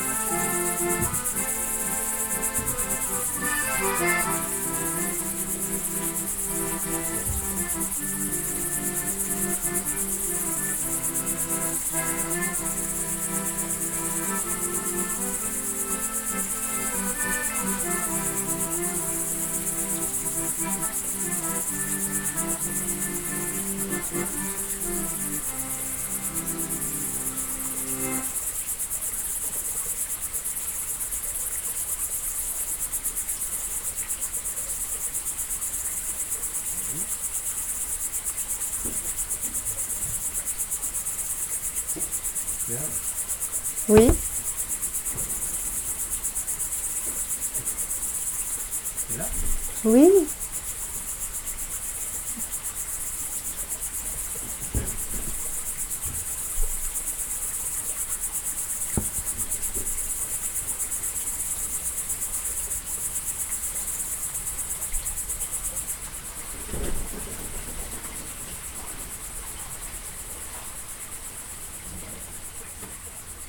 Lądek-Zdrój, Pologne - Accordion
The neighbour is playing accordion.